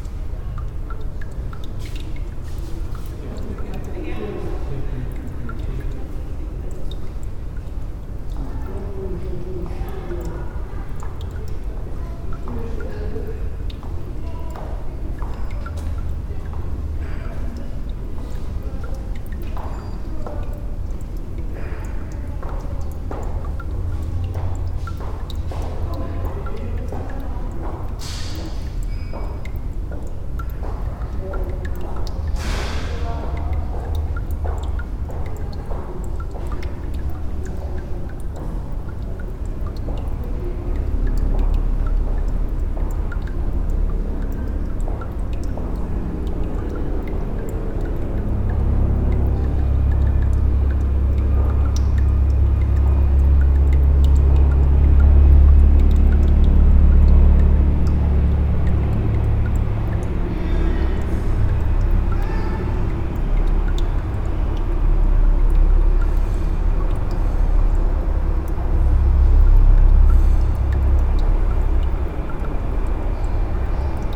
Saint-Josse-ten-Noode, Belgium - A dripping tap
At the end of the big glasshouse, just above one of the nice indoors pools, there is a dripping tap. So nice to listen to a tiny sound in this place full of massive sounds. Recorded just with EDIROL R-09.